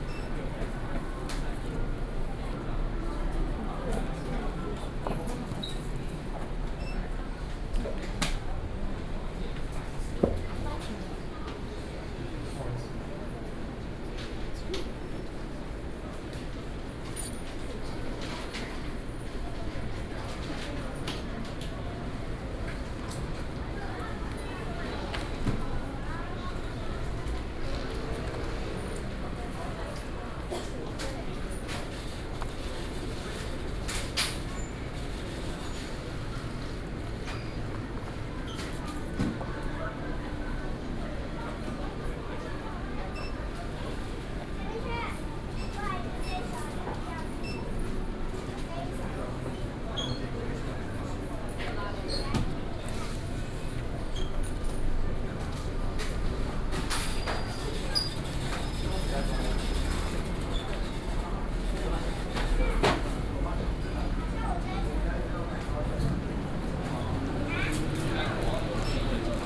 Eslite Bookstore, Sec., Xinsheng S. Rd. - Walking inside the bookstore
Walking inside the bookstore
Sony PCM D50 + Soundman OKM II
Daan District, Taipei City, Taiwan